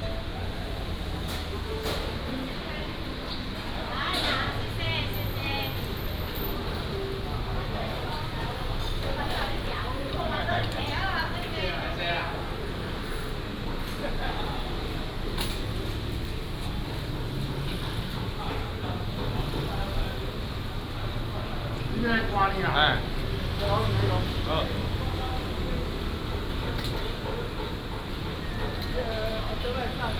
文華市場, Tainan City - Walking in the market
Walking in the market, Traffic sound